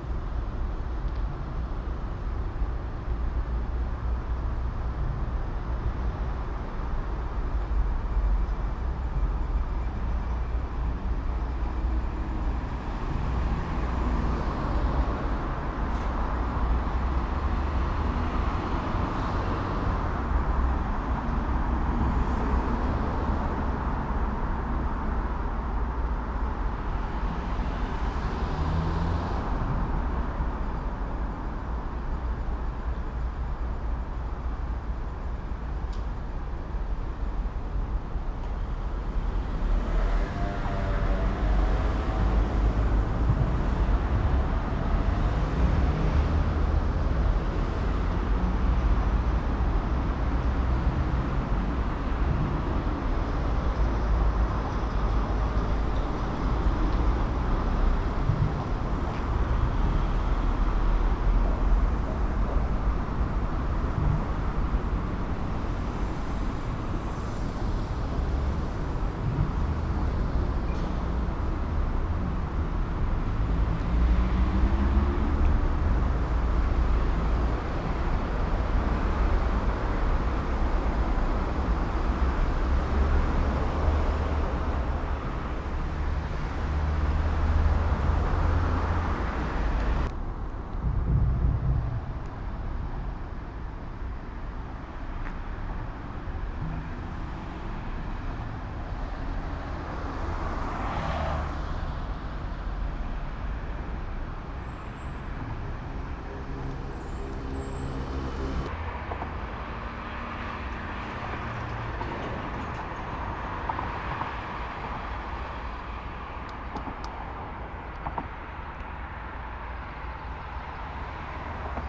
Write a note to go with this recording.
recording on a parking lot under the traffic road